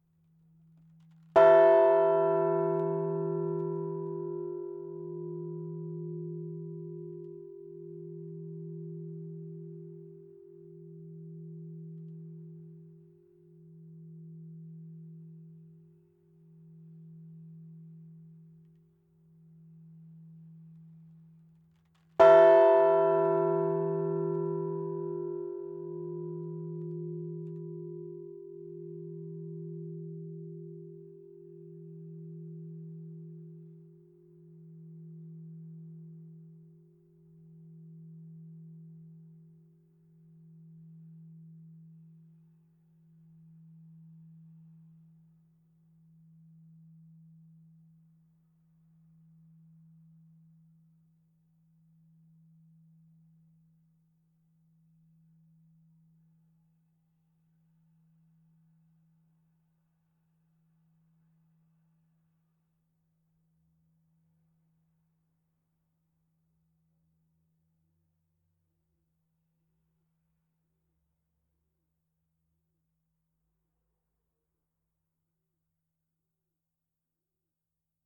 Rue Jean Jaurès, Haspres, France - Haspres - Département du Nord - église St Hugues et St Achere - Tintements.
Haspres - Département du Nord
église St Hugues et St Achere
Tintements.
France métropolitaine, France, 19 April